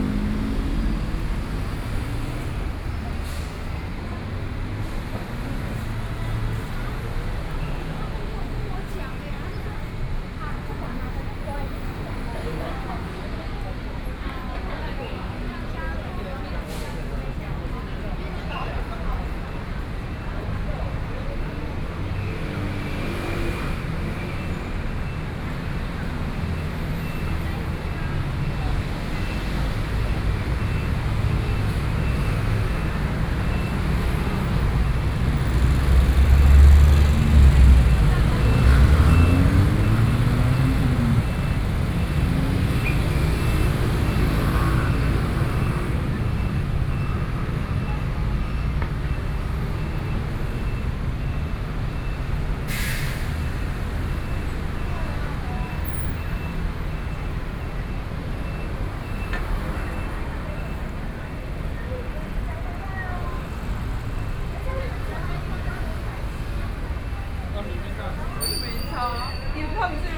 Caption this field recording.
Traffic Noise, Walking in the street, Children frolic sound, Binaural recordings, Sony PCM D50 + Soundman OKM II